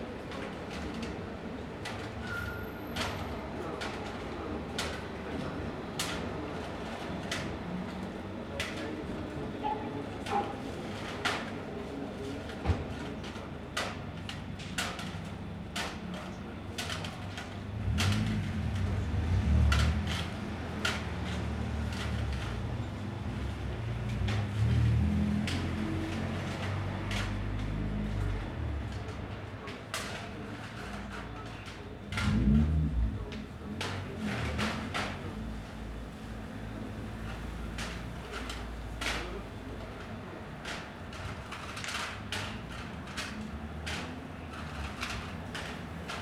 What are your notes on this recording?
a man trampling beverage cans and putting them into a bag. another one approaching with a handful of copper pipes. they exchange a few words and leave.